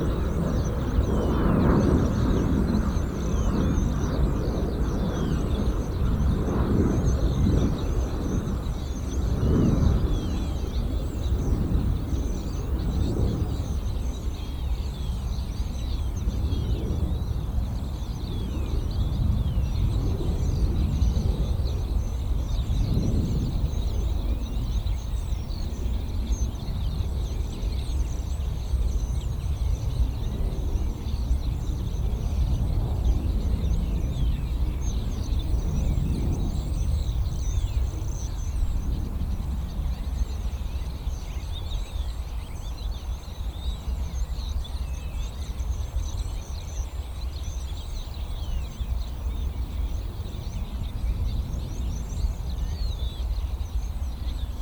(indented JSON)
{
  "title": "Haidfeld, Vienna City Limits - Haidfeld (schuettelgrat, excerpt)",
  "date": "2004-03-20 17:28:00",
  "description": "Fieldrecording, Dusk, Transition",
  "latitude": "48.13",
  "longitude": "16.34",
  "altitude": "196",
  "timezone": "Europe/Vienna"
}